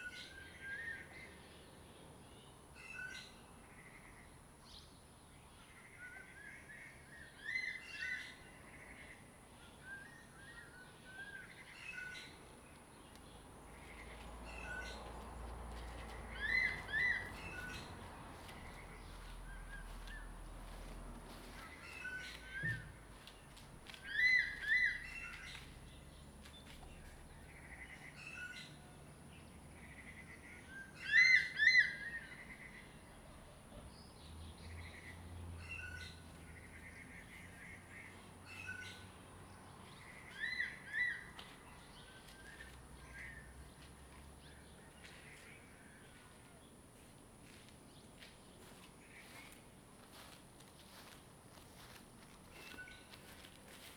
綠屋民宿, 桃米里Puli Township - Bird calls
Bird calls
Zoom H2n MS+XY
Nantou County, Taiwan